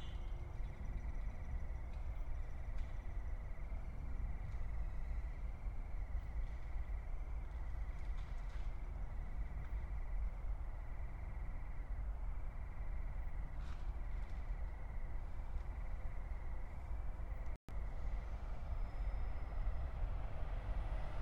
Stadtgarten, Köln - tree crickets, trains
tree crickets, lower pitched because temperature, a long freight train, among others.
(Tascam iXJ2 / iphone, Primo EM172)
Köln, Germany, 9 September, 21:40